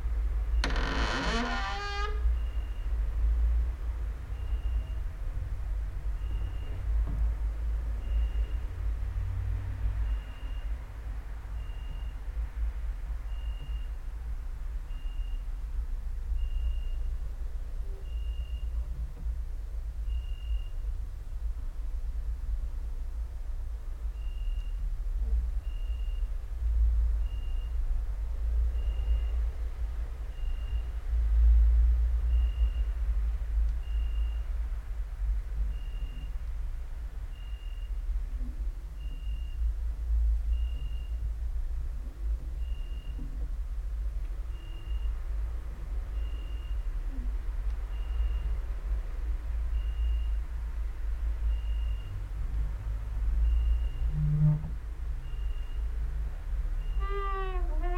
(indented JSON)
{
  "title": "Mladinska, Maribor, Slovenia - late night creaky lullaby for cricket/19",
  "date": "2012-08-28 23:41:00",
  "description": "cricket outside, exercising creaking with wooden doors inside",
  "latitude": "46.56",
  "longitude": "15.65",
  "altitude": "285",
  "timezone": "Europe/Ljubljana"
}